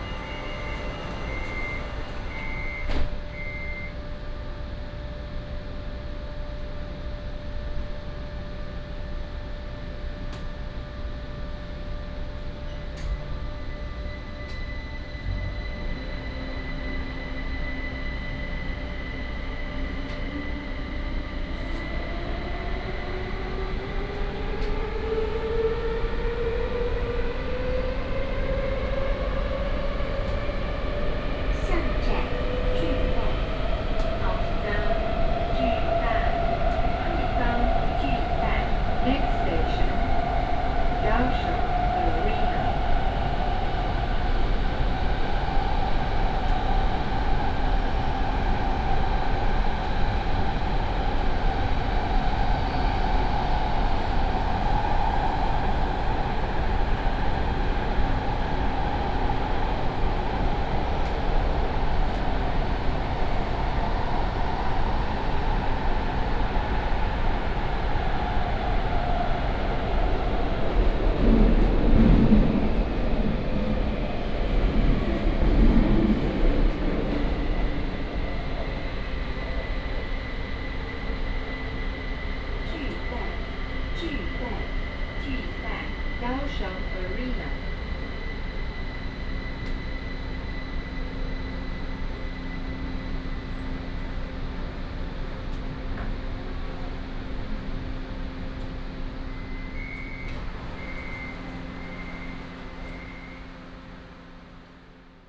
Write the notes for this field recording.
Take the MRT, In the compartment